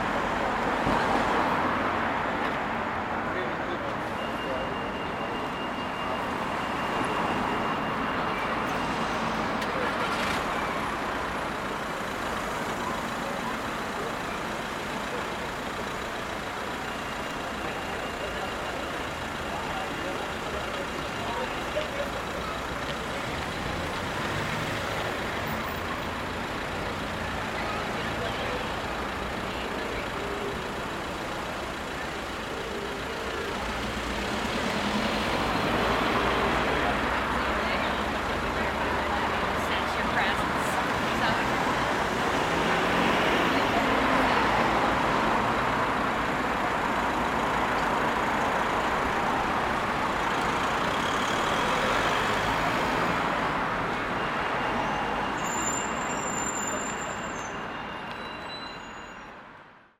Great Northern Mall, Belfast, UK - Great Victoria Street
Recording of suitcase traveller, groups chatting, vehicles passing, taxi stalling/driving away, footsteps, pedestrian cross lights, a phone ringing in a vehicle, child talk, emergency vehicle distant siren.